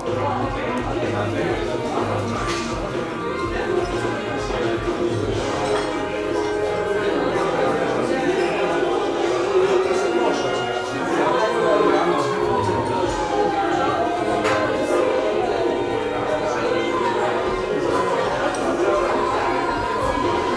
cafe cafetino, old town, Ljubljana - rainy
outside light rain, almost dark already, cups, people keep saying 'adíjo' ...